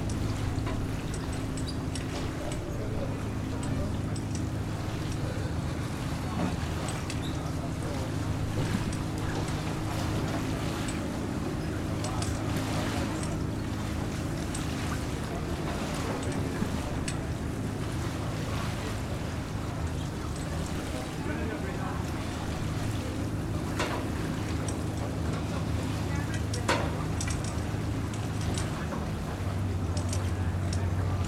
venezia palanca
venezia giudecca: palanca
Venice, Italy, 24 October 2010